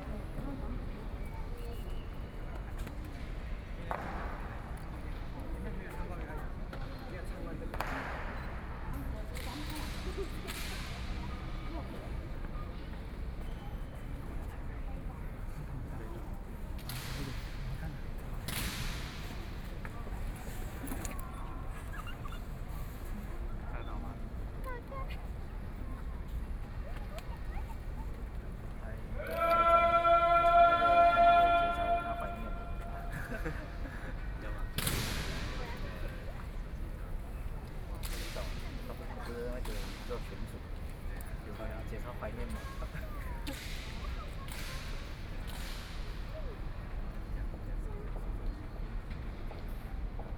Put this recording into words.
Guard ceremony, Tourists, Sony PCM D50+ Soundman OKM II